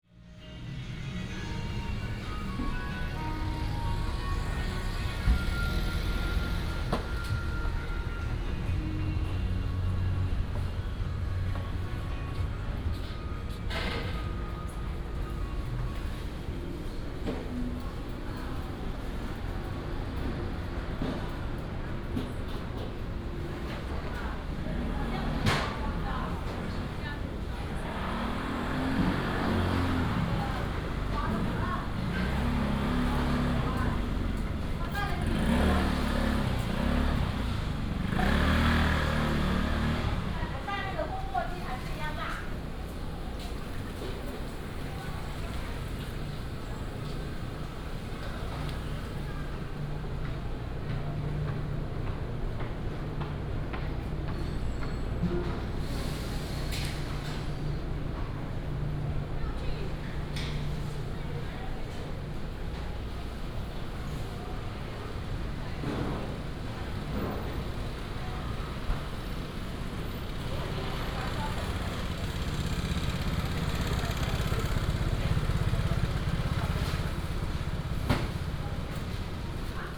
健康黃昏市場, South Dist., Taichung City - Preparing for business
Preparing for business before the market